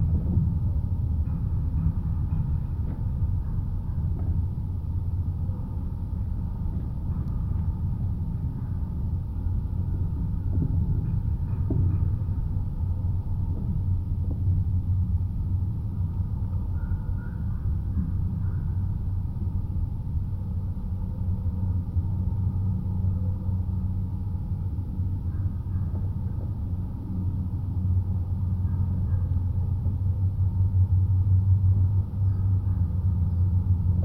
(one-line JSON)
{"title": "Galeliai, Lithuania, abandoned watertower", "date": "2019-12-29 15:30:00", "description": "contact microphones on the base of abandoned metallic water tower - a relict from soviet times. another one to my collection:)", "latitude": "55.56", "longitude": "25.54", "altitude": "95", "timezone": "Europe/Vilnius"}